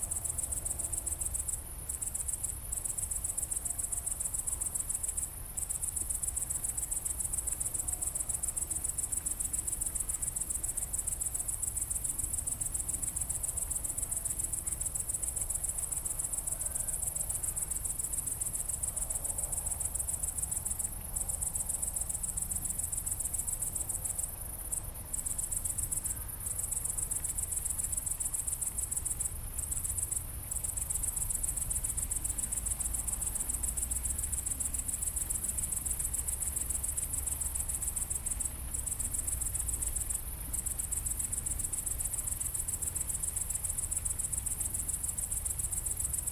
Marais-Vernier, France - Criquets
This day, we slept in a pasture. Here, some criquets sing during the night.